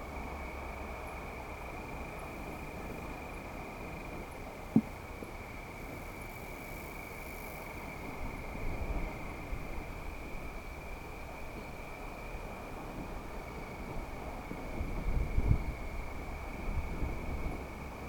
autumn night ambience on stromboli island
Lipari ME, Italy